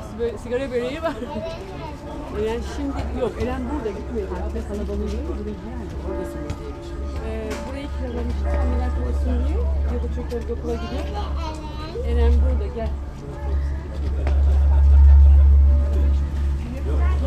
park, venloer str. - kiosk, little party
Cologne, Germany, September 2009